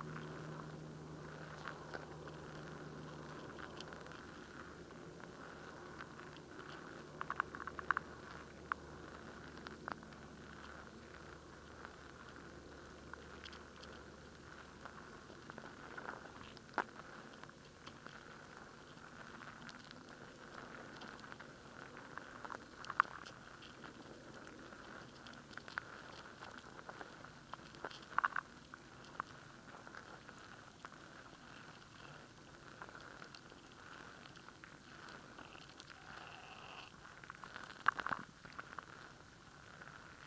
{"title": "Cattle Point Tidal Pool Lekwungen Territory, Victoria, BC, Canada - ReciprocalListening-BarnaclePool", "date": "2020-07-16 09:15:00", "description": "Lekwungen lands and waters, the Salish Sea.\nListening for hidden sounds\nIntimate sounds\nOld sounds\nInside my body\nInside layers of rock\nAncestors\nUnder the water\nWater licking rock as the tide ebbs, low tide, no wind\nBarnacle casings.\nUnder the surface, though, life.\nRhythm of scurrying, eating, crunching.\nThe way language forms from these sounds\nGuttural gurgling wet unfolding.\nResonating from deeper in the chest.\nFrom below the feet\nFrom being encased in these rocks.\nChanging how I think of my speech.\nListening from the perspective of a barnacle.\nResponse to \"Reciprocal Listening\" score for NAISA WorldListeningDay2020\nRecorded with hydrophone pair.", "latitude": "48.44", "longitude": "-123.29", "altitude": "4", "timezone": "America/Vancouver"}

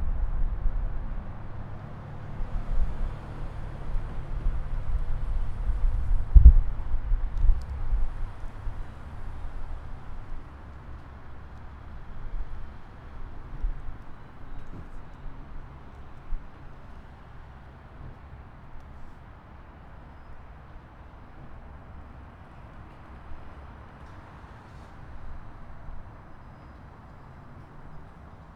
{"title": "Walt Whitman Avenue, Mount Laurel, NJ, USA - Outside of the Mount Laurel Library", "date": "2014-03-02 12:30:00", "description": "This recording was taken outside at the entrance of the Mount Laurel Library during the middle of the day.", "latitude": "39.96", "longitude": "-74.92", "timezone": "America/New_York"}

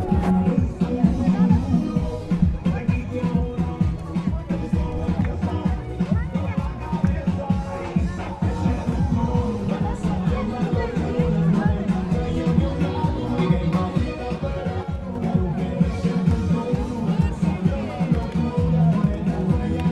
{"title": "Khoroo, Ulaanbaatar, Mongolei - children's day in front of the state department store", "date": "2013-06-01 12:48:00", "description": "children on stage, clowns coming, playing silly music and dance in formation, walk away in the department store", "latitude": "47.92", "longitude": "106.91", "altitude": "1301", "timezone": "Asia/Ulaanbaatar"}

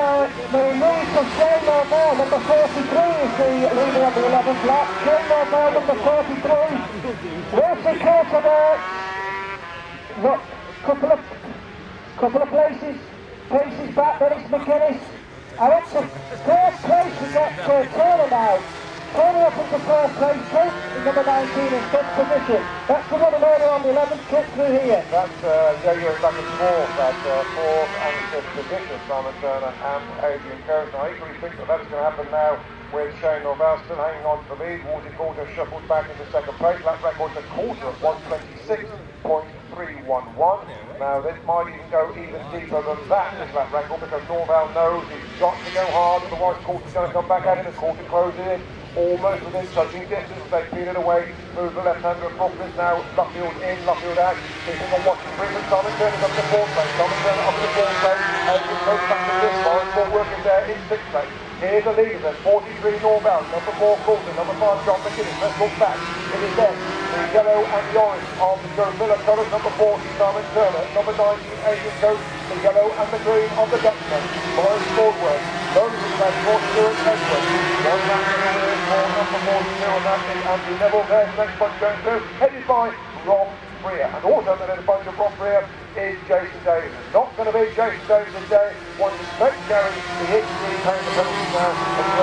{"title": "Silverstone Circuit, Towcester, UK - BSB 1998 ... 250 race ...", "date": "1998-09-06 16:00:00", "description": "BSB 1998 ... 250 race ... commentary ... one point stereo mic to minidisk ... date correct ... time optional ... John McGuinness would have been a wee bit young ...", "latitude": "52.08", "longitude": "-1.02", "altitude": "154", "timezone": "Europe/London"}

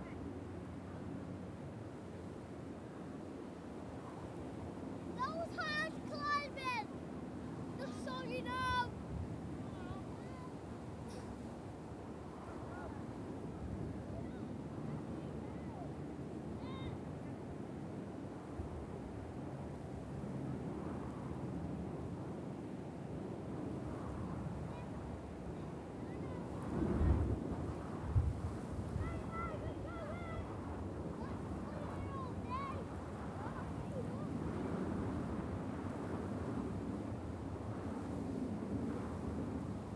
{"title": "Unnamed Road, Prestatyn, UK - Gronant Sand Dunes", "date": "2017-08-05 10:30:00", "description": "Morning meditation on top of sand dunes buffeted by the winds and joined, for a time, by some children playing in the dunes. Recorded on a Tascam DR-40 using the on-board microphones as a coincident pair (with windshield).", "latitude": "53.35", "longitude": "-3.36", "altitude": "7", "timezone": "Europe/London"}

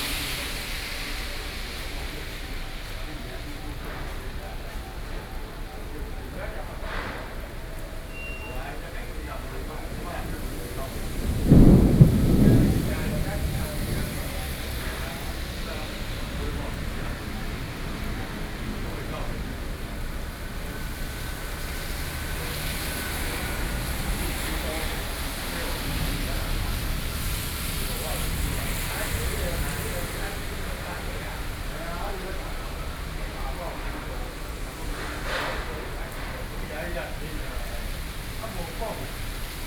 {"title": "Taipei, Taiwan - Thunderstorm", "date": "2013-07-06 14:44:00", "description": "Traffic Noise, Sound of conversation among workers, Community broadcasting, Sony PCM D50, Binaural recordings", "latitude": "25.07", "longitude": "121.53", "altitude": "13", "timezone": "Asia/Taipei"}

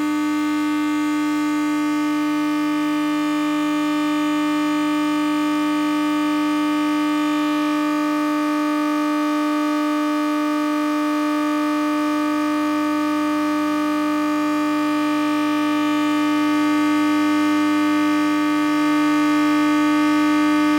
{"title": "Ixelles, Belgium - Electromagnetic travel", "date": "2018-08-25 09:35:00", "description": "Electromagnetic travel inside a train, recorded with a telephone coil pickup stick on the window. Train waiting in the Bruxelles-Luxembourg station, and going threw the Bruxelles-Schuman station.", "latitude": "50.84", "longitude": "4.37", "altitude": "68", "timezone": "GMT+1"}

